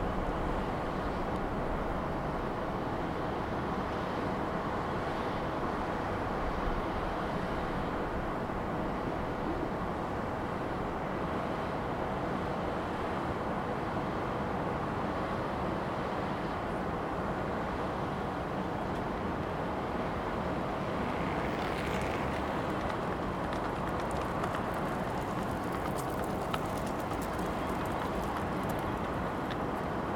вулиця Гліба Успенського, Вінниця, Вінницька область, Україна - Alley12,7sound10Roshenplant
Ukraine / Vinnytsia / project Alley 12,7 / sound #10 / Roshen plant